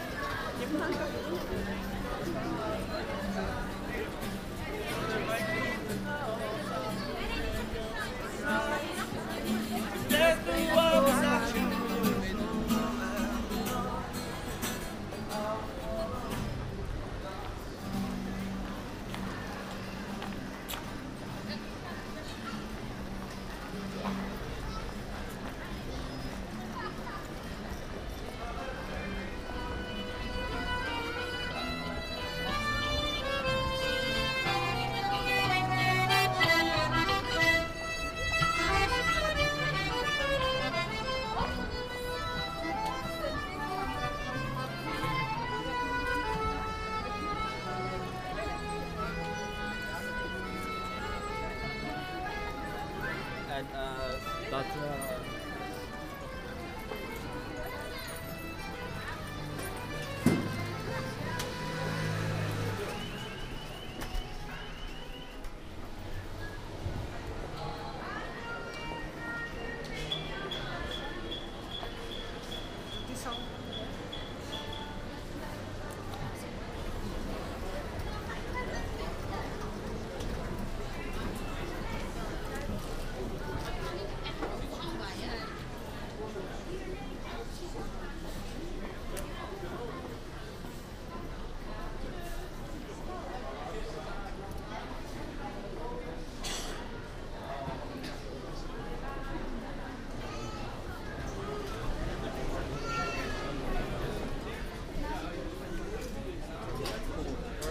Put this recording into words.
A walk through the city The Hague: Binnenhof, Buitenhof, Passage, Spuistraat, Grote Marktstraat with musicians, MediaMarkt. Binaural recording, some wind.